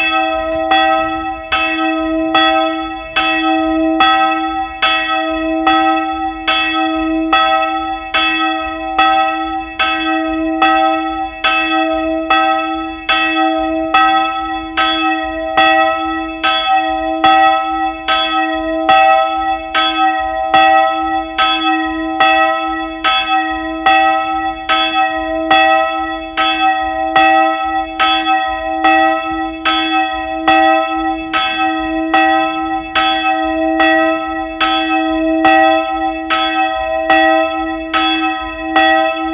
Wyspa Sobieszewska, Gdańsk, Poland - Dzwony